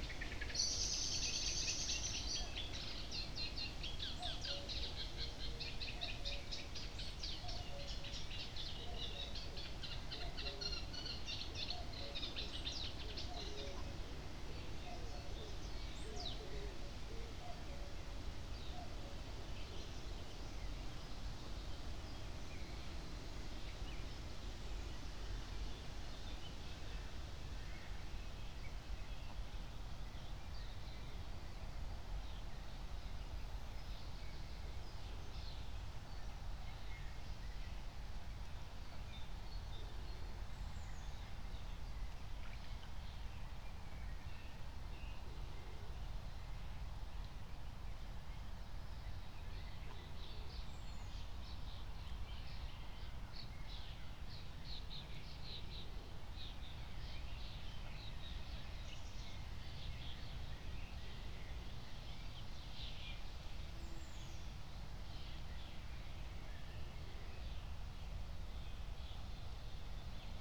09:27 Berlin, Buch, Mittelbruch / Torfstich 1 - pond, wetland ambience
Reed Warbler (Drosselrohrsänger) and Cuckoo (Kuckuck) among others, wind in reed, Sunday morning church bells